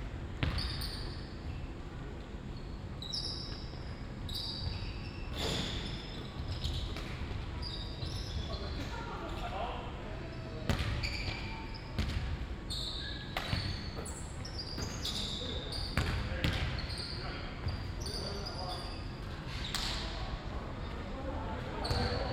CAU sporthall Kiel, volleyball players: john grzinich - Basketball training
Some folks playing basketball at the Kiel University sports hall, squeaking shoes, bouncing balls, a few cheers, talking of some viewers with children, constant noise from the ventilation system.
Binaural recording, Zoom F4 recorder, Soundman OKM II Klassik microphone
Kiel, Germany, 26 May 2017, 16:20